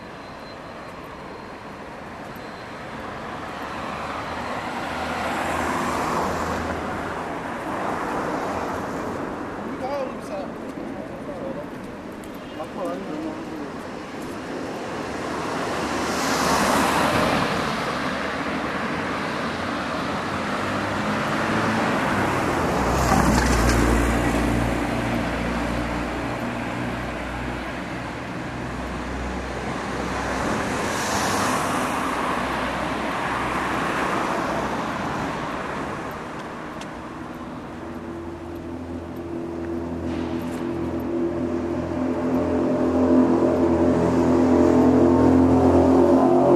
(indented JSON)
{
  "title": "Fullmoon Nachtspaziergang Part IV",
  "date": "2010-10-23 22:26:00",
  "description": "Fullmoon on Istanbul, walking uphill to Şişli passıng the mysterious empty blue skyskrapers, shadowing a bit of silence into the street.",
  "latitude": "41.06",
  "longitude": "28.99",
  "altitude": "80",
  "timezone": "Europe/Istanbul"
}